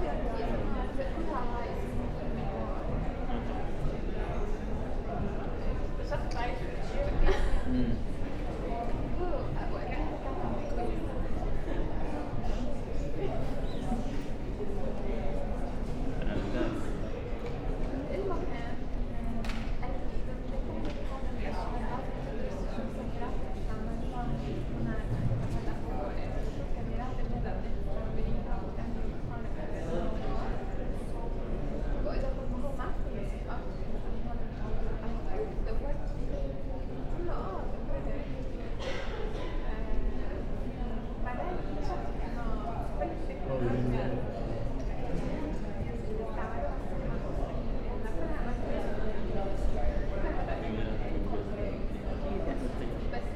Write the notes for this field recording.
A short 10 minute meditation in the study area on the mezzanine floor above the cafe at the Headington campus of Oxford Brookes University. (Sennheiser 8020s either side of a Jecklin Disk to a SD MixPre6)